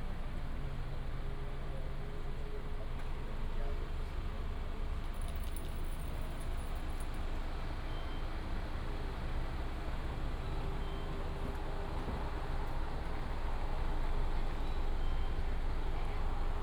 中華北路, Qingshui Dist., Taichung City - In front of the convenience store
The police patrolled the convenience store, Traffic sound, Dog sound, Binaural recordings, Sony PCM D100+ Soundman OKM II
Qingshui District, Taichung City, Taiwan